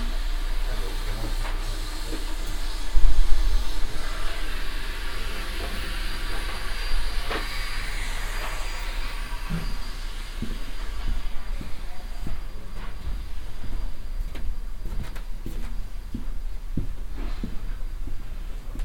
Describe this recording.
inside a store for professional art material - walk thru the shelves, soundmap nrw - social ambiences and topographic field recordings